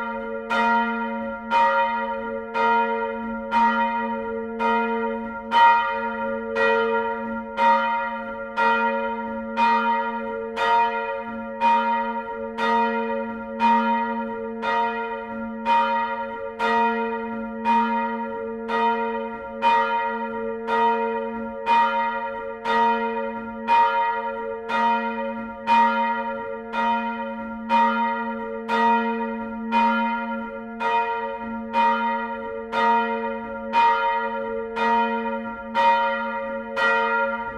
essen, old catholic church, bells
and last not least the number four.
Big thanks to Mrs.Weyerer-Reimer for ringing them for me.
Projekt - Klangpromenade Essen - topographic field recordings and social ambiences
Essen, Germany